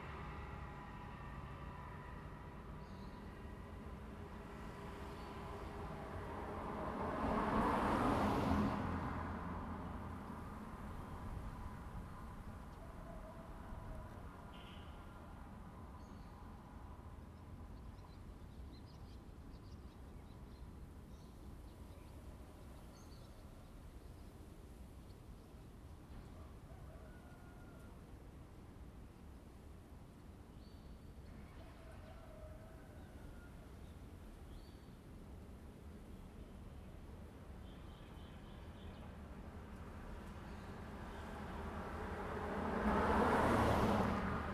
Traffic sound, The train runs through, bird sound
Zoom H2n MS+XY +Spatial audio